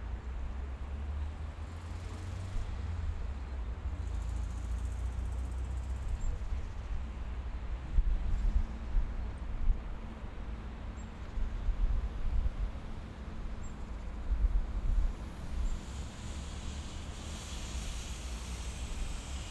{"title": "ул. 50-летия ВЛКСМ, Челябинск, Челябинская обл., Россия - Morning, traffic, cars, tram, flying plane", "date": "2020-02-21 10:06:00", "description": "Recorded at one of the major intersections of the Chelyabinsk microdistrict. Morning of the working day.\nZoom F1 + XYH6", "latitude": "55.24", "longitude": "61.38", "altitude": "208", "timezone": "Asia/Yekaterinburg"}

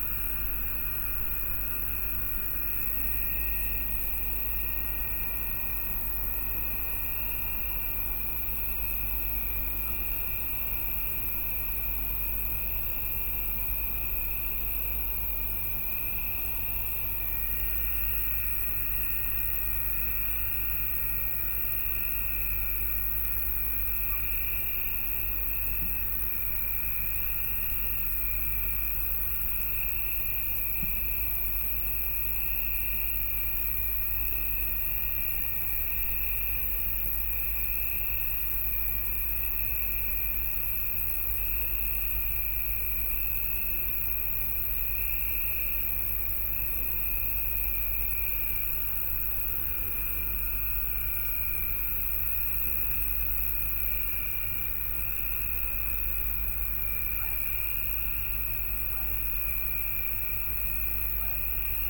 {"title": "Sveti Ivan Dol, Buzet, Chorwacja - evening near brewary", "date": "2021-09-07 22:00:00", "description": "evening ambience on a porch of a small house located near a brewery. the constant high pitched sound is sound of the brewery complex. (roland r-07)", "latitude": "45.40", "longitude": "13.97", "altitude": "45", "timezone": "Europe/Zagreb"}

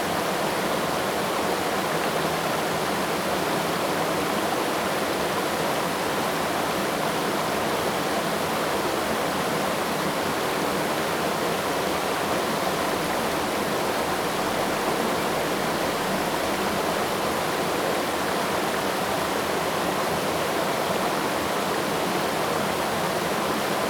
{"title": "茅埔坑溪, Nantou County - Stream sound", "date": "2016-03-27 12:01:00", "description": "Stream\nZoom H2n MS+XY", "latitude": "23.94", "longitude": "120.94", "altitude": "470", "timezone": "Asia/Taipei"}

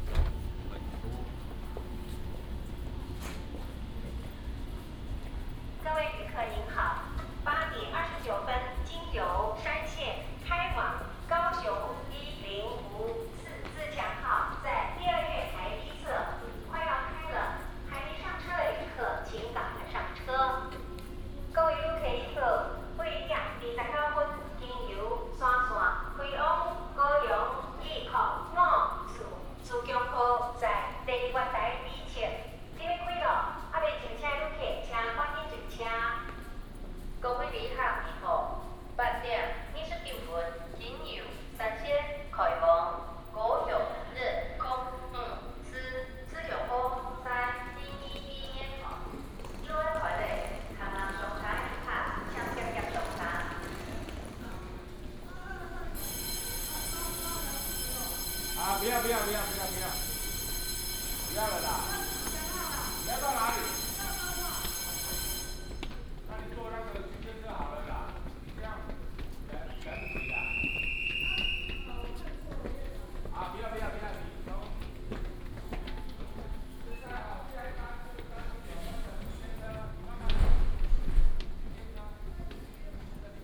{"title": "Zhunan Station, 苗栗縣竹南鎮 - Walking at the station", "date": "2017-01-18 08:27:00", "description": "Walking at the station, To the station exit, Traffic Sound", "latitude": "24.69", "longitude": "120.88", "altitude": "8", "timezone": "GMT+1"}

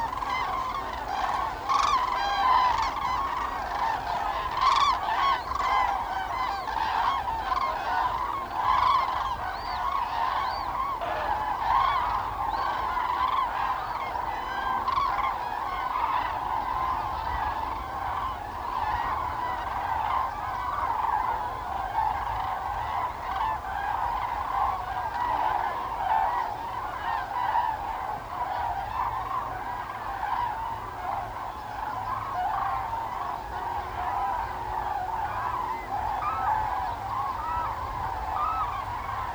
{"title": "Linum, Fehrbellin, Germany - Migrating Cranes in Flight2", "date": "2014-10-07 18:04:00", "description": "During their autumn migration northern Europe's cranes gather in hundreds of thousands at Linum to feed up before continuing their journey southwards. They are an amazing sight. Punctually, at dusk, flocks of up to 50 birds pass overhead in ever evolving formations trumpeting as they go. The Berlin/Hamburg motorway is a kilometer away and Tegel airport nearby. The weather on this evening was rainy and yellowing poplar leaves were hissing in the wind. These are the sound sources for this track, which is 3 consecutive recording edited together.", "latitude": "52.76", "longitude": "12.89", "altitude": "32", "timezone": "Europe/Berlin"}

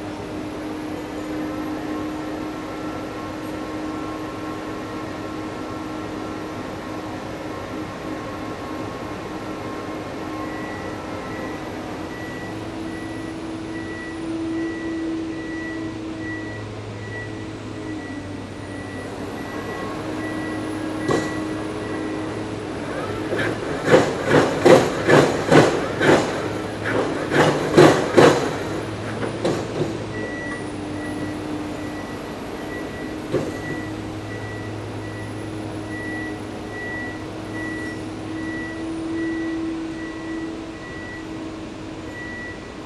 {"title": "Villa Wahnfried, Bayreuth, Deutschland - Villa Wahnfried", "date": "2013-05-28 15:50:00", "description": "Composer Richard Wagners home - Villa Wahnfried\nconstruction work", "latitude": "49.94", "longitude": "11.58", "altitude": "346", "timezone": "Europe/Berlin"}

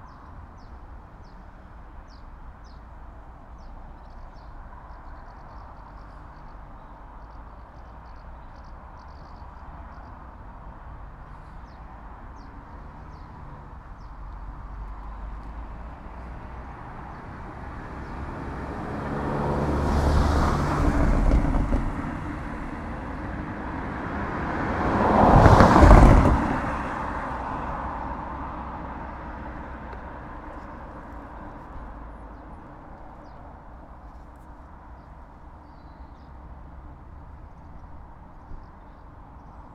{"title": "Callerton Parkway, Woolsington, UK - Callerton Parkway Level Crossing", "date": "2016-08-21 20:20:00", "description": "Level Crossing at Callerton Parkway Metro Station. Sound of Level Crossing warning, cars going over crossing and Metro train going to Newcastle Airport. Also people getting off train. Recorded on Sony PCM-M10.", "latitude": "55.03", "longitude": "-1.70", "altitude": "77", "timezone": "Europe/London"}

